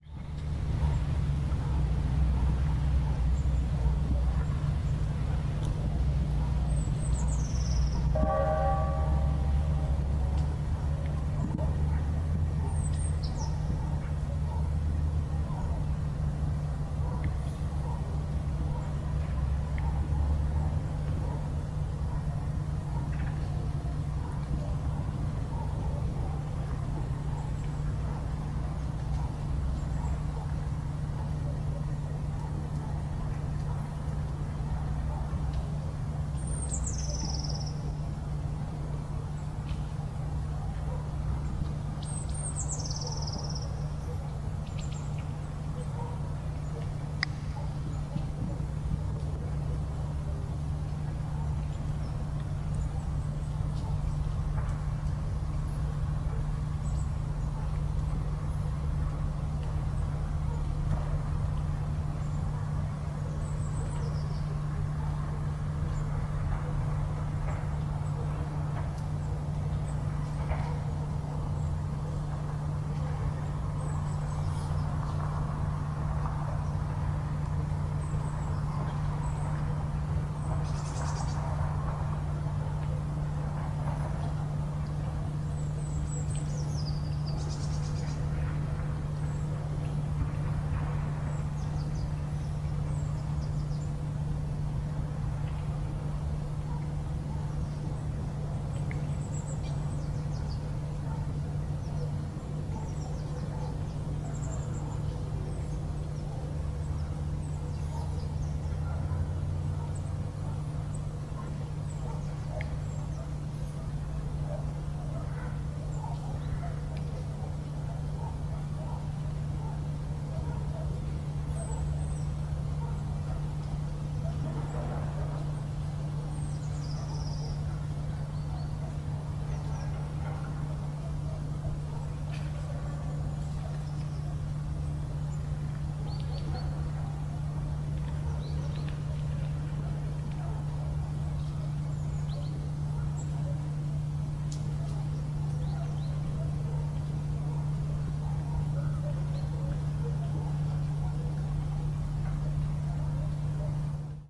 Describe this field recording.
Река с легкой коркой льда, туман, звуки птиц и шорох камышей и капающий прямо из воздуха конденсат. На заднем плане разбирают завод.